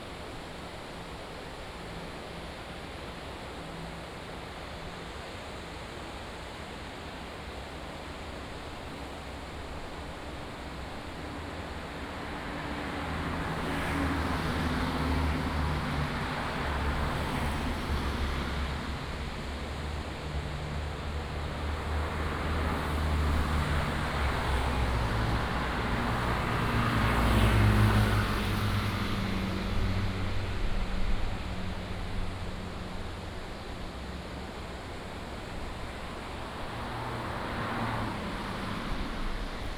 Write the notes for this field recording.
Streams and traffic sounds, Binaural recordings